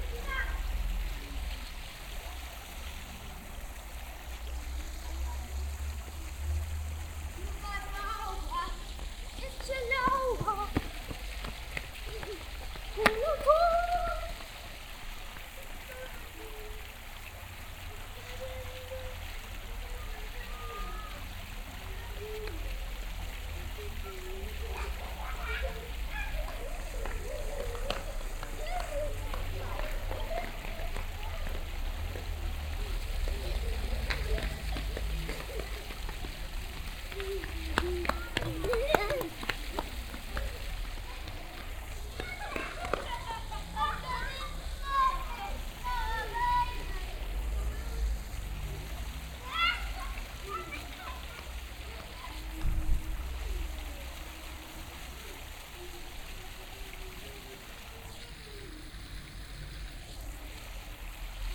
clervaux, fountain
A water fountain at the beginning of the towns small traffic free zone. The sound of the water and children running around, screaming in local tongue and playing catch me.
Recorded in the early evening on a warm windy summer day.
Clervaux, Springbrunnen
Ein Springbrunnen am Beginn der Fußgängerzone der Stadt. Das Geräusch von Wasser und rennenden Kindern, im lokalen Dialekt rufend und Fangen spielend. Aufgenommen am frühen Abend an einem warmen windigen Sommertag.
Clervaux, jet d’eau
Une fontaine qui crache son jet à l’entrée de la zone piétonne de la ville. Le bruit de l’eau et des enfants qui courent, s’interpellent dans le dialecte local et jouent au chat et à la souris. Enregistré tôt le matin, un jour d’été chaud et venteux.
Projekt - Klangraum Our - topographic field recordings, sound objects and social ambiences